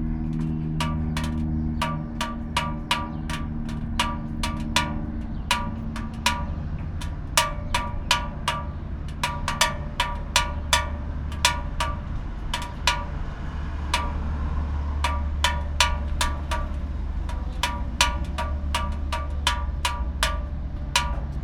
rain gutter, tyrševa - water drops, behind old gutter